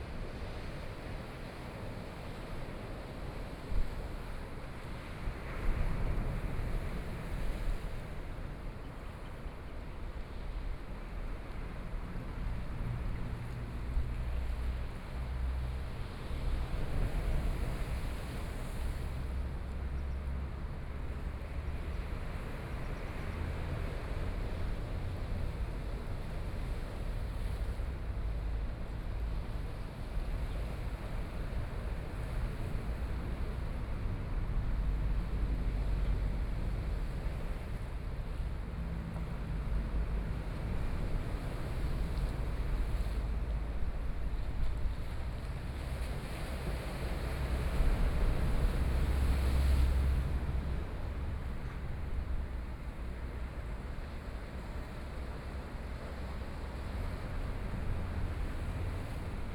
{
  "title": "Hualien City, Taiwan - Sound of the waves",
  "date": "2013-11-05 12:56:00",
  "description": "Sound of the waves, Binaural recordings, Sony PCM D50+ Soundman OKM II",
  "latitude": "23.97",
  "longitude": "121.61",
  "altitude": "7",
  "timezone": "Asia/Taipei"
}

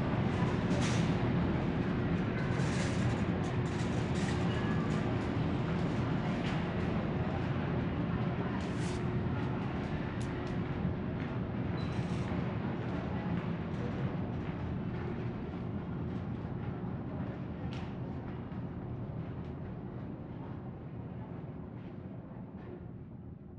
{
  "title": "Denver, CO, USA - DA Concourse A",
  "date": "2015-11-30 13:15:00",
  "description": "Recorded with a pair of DPA 4060s and a Marantz PMD661",
  "latitude": "39.85",
  "longitude": "-104.68",
  "altitude": "1642",
  "timezone": "America/Denver"
}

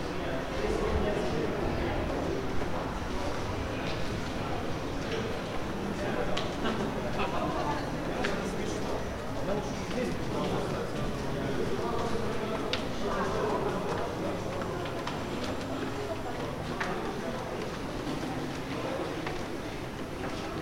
{
  "title": "minsk, october square, perechod I - minsk, october square, perechod",
  "date": "2009-08-20 19:34:00",
  "latitude": "53.90",
  "longitude": "27.56",
  "altitude": "218",
  "timezone": "Europe/Minsk"
}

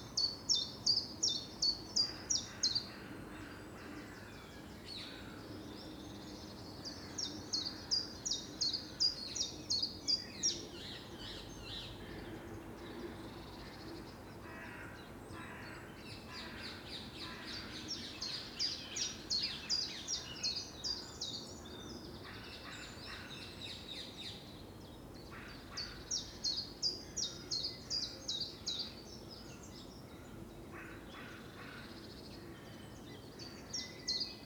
{
  "title": "Rue du Melkriek, Uccle, Belgique - finally peace 7",
  "date": "2020-03-23 07:40:00",
  "latitude": "50.79",
  "longitude": "4.33",
  "altitude": "31",
  "timezone": "Europe/Brussels"
}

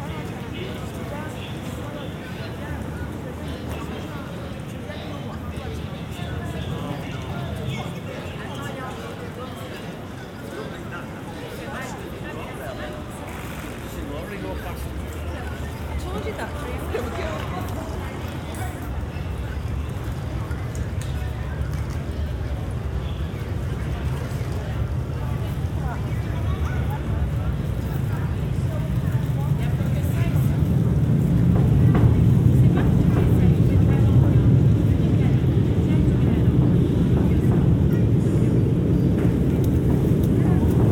{
  "title": "Gare du Midi, Saint-Gilles, Belgique - Main hall ambience",
  "date": "2022-06-13 11:30:00",
  "description": "People passing by, conversations, synthetic voices, trains passing above.\nTech Note : Ambeo Smart Headset binaural → iPhone, listen with headphones.",
  "latitude": "50.84",
  "longitude": "4.34",
  "altitude": "29",
  "timezone": "Europe/Brussels"
}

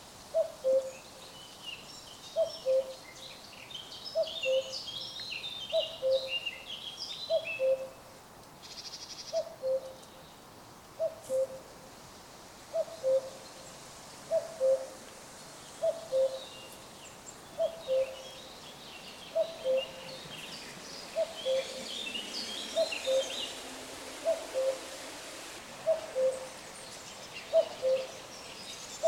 {
  "title": "Marktweg, Pirna, Deutschland - Cuckoo",
  "date": "2020-05-30 09:33:00",
  "description": "Cuckoo and glider taking off in the background\nRecorded with Olympos L11",
  "latitude": "50.98",
  "longitude": "13.91",
  "altitude": "131",
  "timezone": "Europe/Berlin"
}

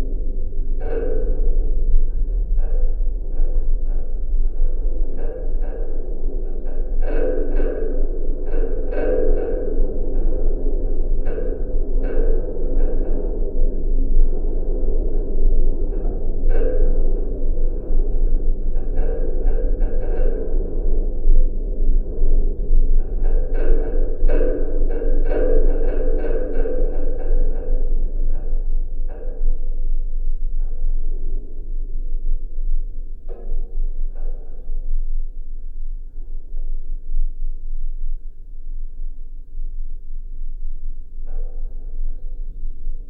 {
  "title": "Birštonas, Lithuania, abandoned structure",
  "date": "2022-06-19 12:05:00",
  "description": "Half builded, abandoned metallic building. Contact microphones.",
  "latitude": "54.61",
  "longitude": "24.02",
  "altitude": "50",
  "timezone": "Europe/Vilnius"
}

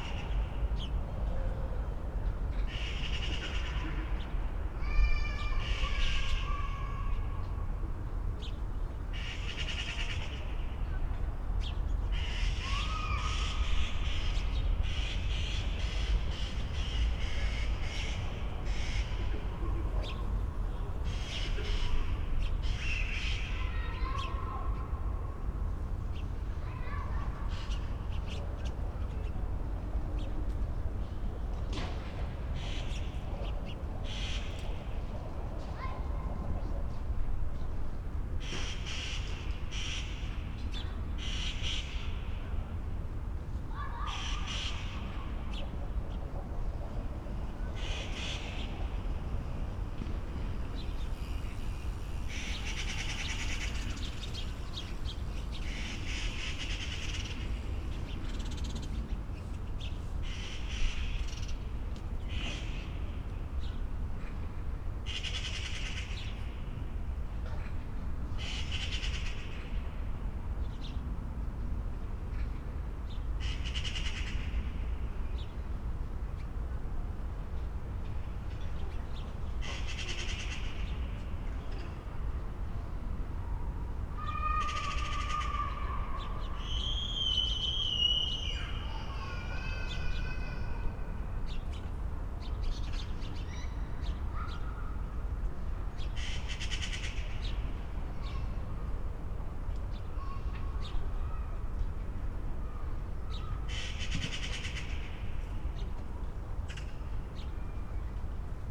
{
  "title": "Bruno-Apitz-Straße, Berlin Buch - building block, inner yard, early evening ambience",
  "date": "2021-09-05 19:30:00",
  "description": "Berlin Buch, inner yard between building blocks, domestic sounds on a Sunday early evening in late Summer\n(Sony PCM D50, Primo EM172)",
  "latitude": "52.63",
  "longitude": "13.49",
  "altitude": "59",
  "timezone": "Europe/Berlin"
}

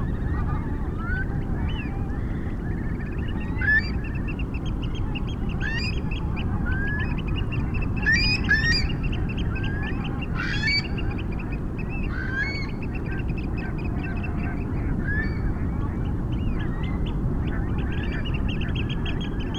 {
  "title": "Budle Cottages, Bamburgh, UK - pink-footed geese soundscape ... leaving roost ...",
  "date": "2019-11-06 07:20:00",
  "description": "pink-footed geese soundscape ... leaving roost ... SASS on tripod ... bird calls from ... whooper swan ... curlew ... dunnock ... mallard ... wren ... rook ... crow ... robin ... blackbird ... wigeon ... reed bunting ... pheasant ... bar-tailed godwit ... oystercatcher ... greylag geese ... turnstone ... rock pipit ... black-headed gull ... ringed plover ... first group leave at 5:10 ish ... background noise ... a particularly raging sea ... the sound of the birds described by some one as a 'wild exhilarating clangour' ...",
  "latitude": "55.61",
  "longitude": "-1.76",
  "altitude": "3",
  "timezone": "Europe/London"
}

